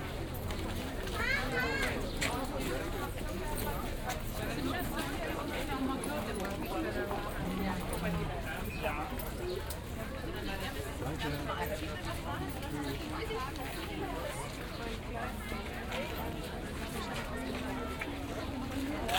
berlin, maybachufer - flowmarket
sunday afternoon, walk along the so called flowmarkt, a recently established second hand market. significant for the ongoing change of this quarter.